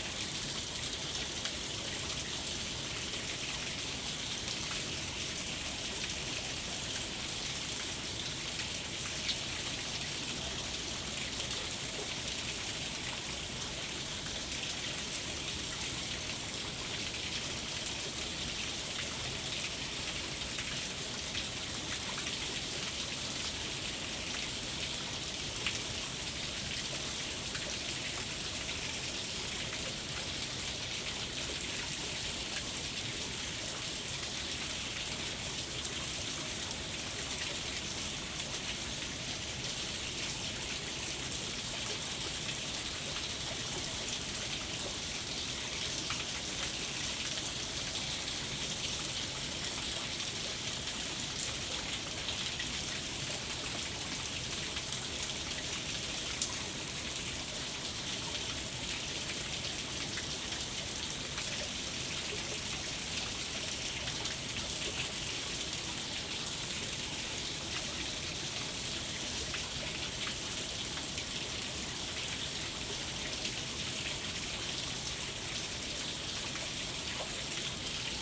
Swindale, UK - waterfall (cave)
recorded inside a small "cave" next to waterfalls on Swindale Beck. Zoom H2n.
5 May 2022, 16:44